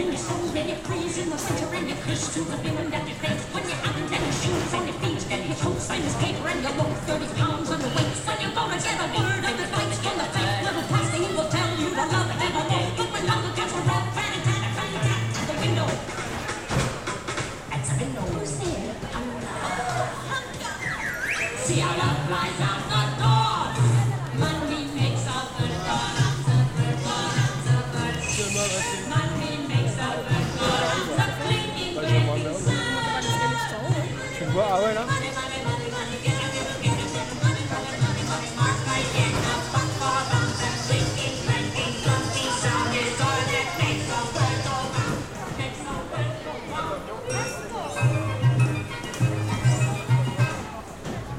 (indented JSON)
{
  "title": "Itsasargi Pasealekua, Donostia, Gipuzkoa, Espagne - JUST MONEY",
  "date": "2022-05-28 14:45:00",
  "description": "the amusement park\nZOOMH6",
  "latitude": "43.32",
  "longitude": "-2.01",
  "altitude": "163",
  "timezone": "Europe/Madrid"
}